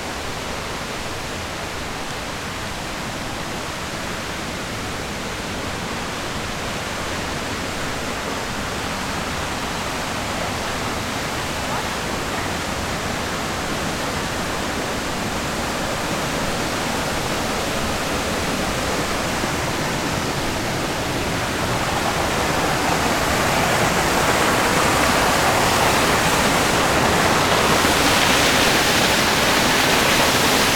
Unnamed Road, Plitvički Ljeskovac, Croatie - Plitvice Lake
Water falls, Plitvice Lakes, Croatia, Zoom H6